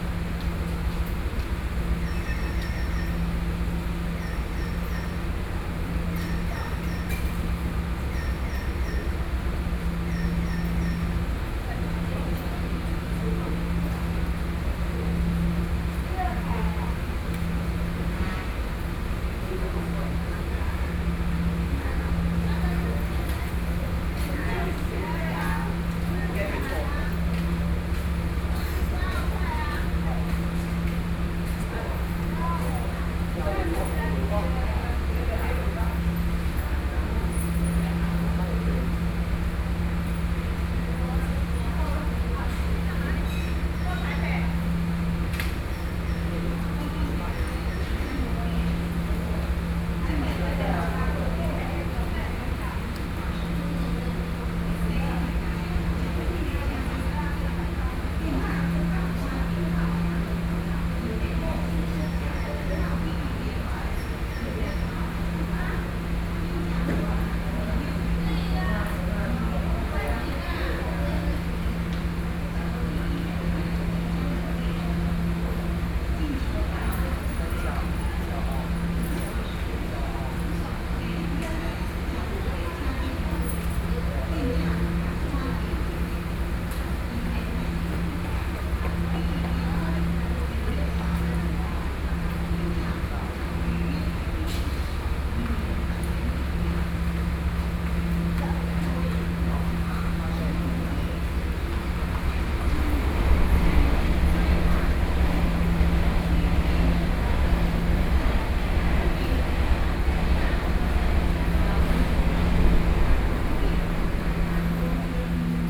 Yangmei Station - Station hall
in the Station hall, Sony PCM D50 + Soundman OKM II